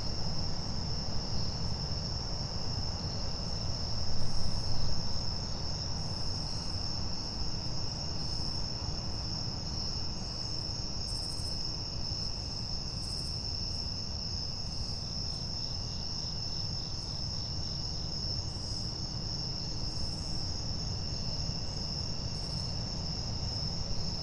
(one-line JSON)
{"title": "Tudor Arms Ave, Baltimore, MD, USA - Fall Chorus", "date": "2019-09-14 21:27:00", "description": "Chorus of night sounds at Wyman Park with the HVAC of Johns Hopkins University in the distance.\nRecorded with a Rode NT4 stereo mic into a Sound Devices MixPre 3 II.", "latitude": "39.33", "longitude": "-76.63", "altitude": "67", "timezone": "America/New_York"}